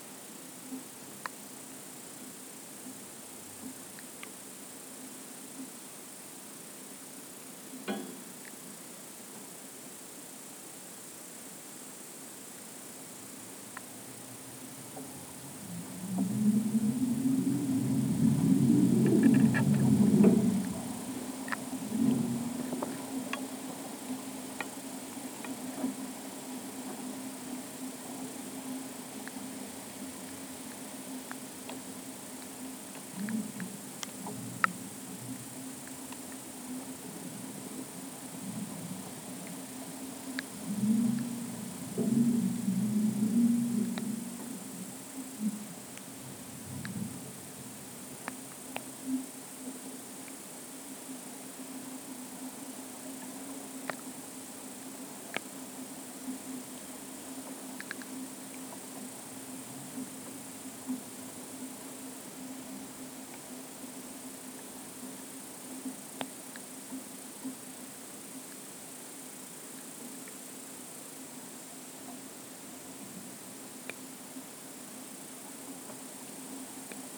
wires grown into a maple
this maple tree in Southern Estonia houses a frog and a hornet nest. metal cord tied around its trunk have now grown into its bark. contact mics on the cords deliver the evening wind and perhaps there are hints of the wasp nest action in the left ear...
recorded for WLD: world listening day 2011